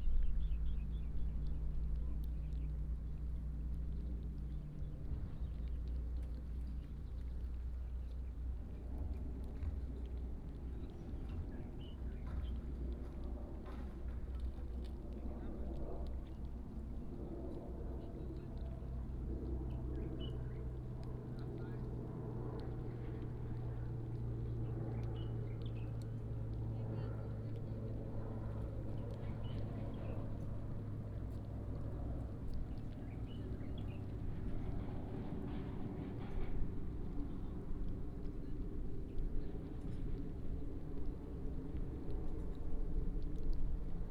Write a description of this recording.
Small fishing port, Small fishing village, dog, bird, Binaural recordings, Sony PCM D100+ Soundman OKM II